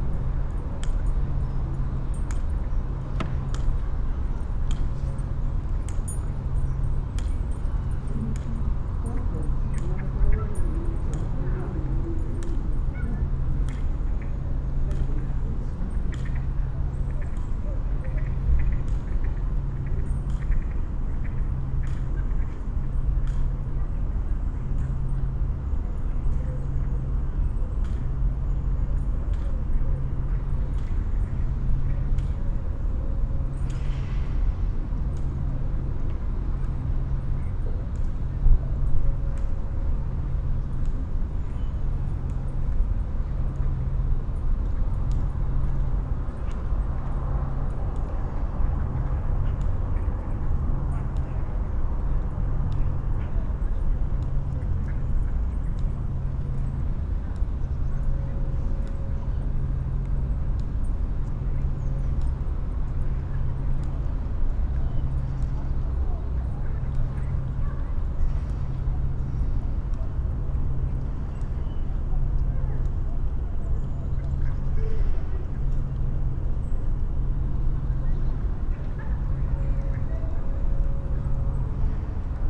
The Malvern Theatres, Malvern, UK
A warm, quiet afternoon in the Priory Park behind the theatres. I sit outside the rear entrance to the foyer concentrating on the passing voices, birds, a jet plane, and children playing far in the distance.
MixPre 6 II with 2 Sennheiser MKH8020s on the table in front of me at head height while I am sitting.